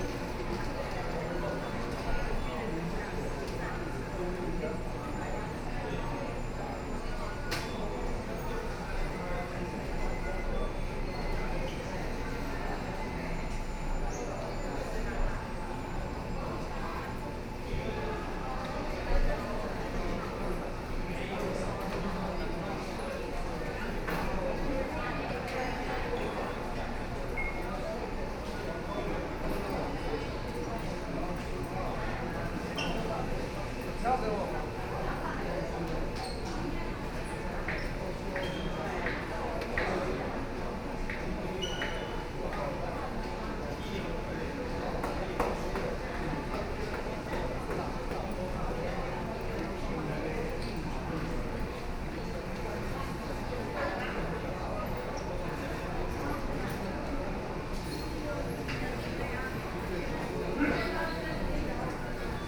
Hualien Station, Taiwan - In the station hall
In the station hall, Binaural recordings, Sony PCM D50 + Soundman OKM II
Hualien County, Taiwan, 5 November, ~16:00